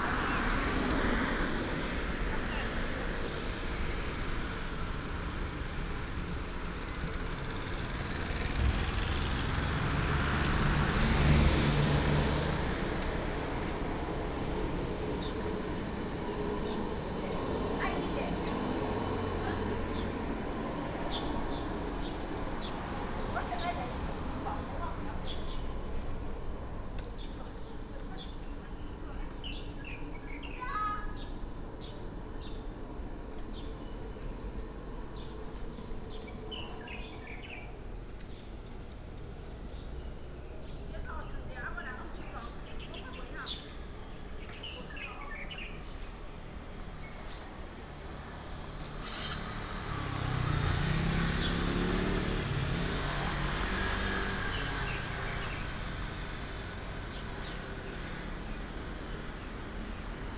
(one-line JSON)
{"title": "Dongyuan St., East Dist., Hsinchu City - The morning street", "date": "2017-09-21 06:10:00", "description": "The morning street, traffic sound, Birds sound, Binaural recordings, Sony PCM D100+ Soundman OKM II", "latitude": "24.80", "longitude": "120.98", "altitude": "29", "timezone": "Asia/Taipei"}